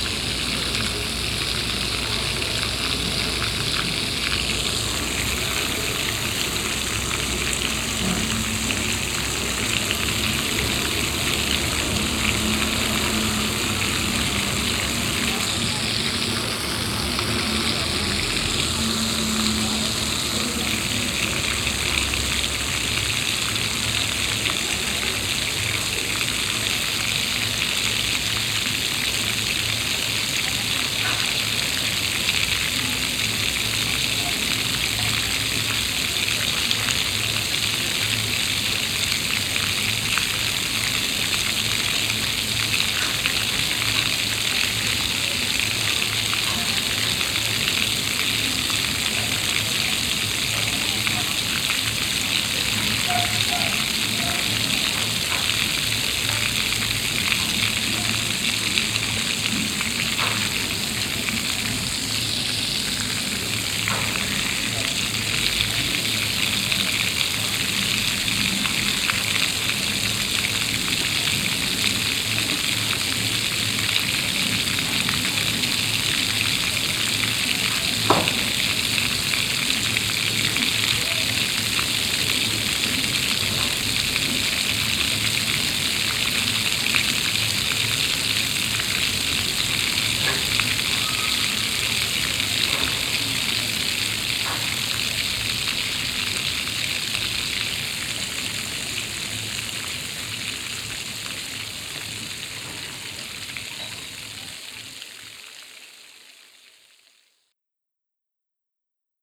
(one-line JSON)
{"title": "Stadtkern, Essen, Deutschland - essen, kennedy square, fountain", "date": "2014-04-04 13:40:00", "description": "On a big plain city square. The sound of a circular water fountain in the mild spring wind.\nAuf einem großen, flachen Stadtplatz. Der Klang von vier Wasserfontänen an einem runden Brunnen.\nProjekt - Stadtklang//: Hörorte - topographic field recordings and social ambiences", "latitude": "51.46", "longitude": "7.01", "altitude": "86", "timezone": "Europe/Berlin"}